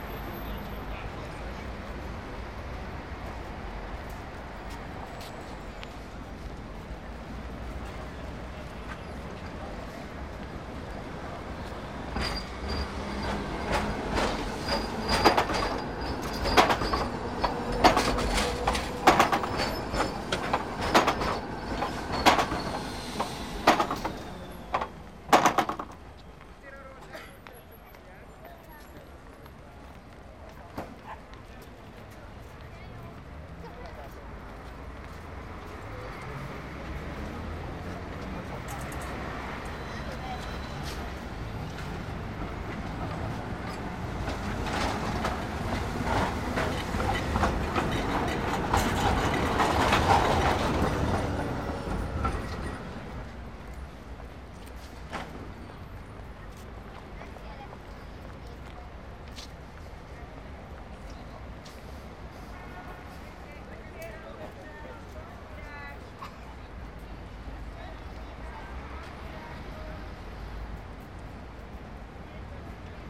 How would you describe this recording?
heavy car traffic and trams plus footsteps, international city scapes and social ambiences